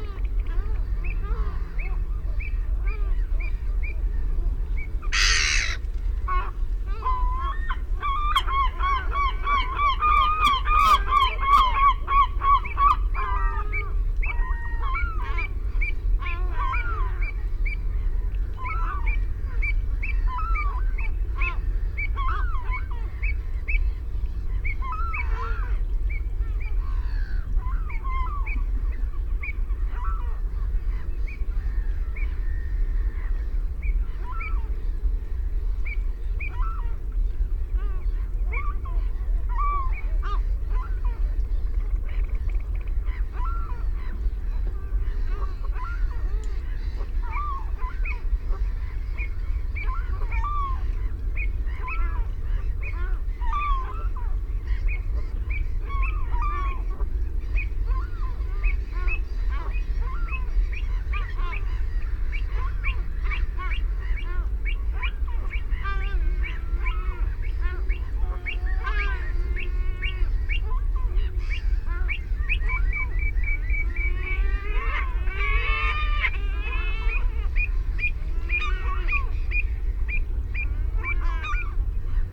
Stone Cottages, Woodbridge, UK - Belpers Lagoon soundscape ... later evening ...
Belpers Lagoon soundscape ... RSPB Havergate Island ... fixed parabolic to minidisk ... birds calls from ... herring gull ... black-headed gull ... canada goose ... ringed plover ... avocet ... redshank ... oystercatcher ... shelduck ... background noise from shipping and planes ...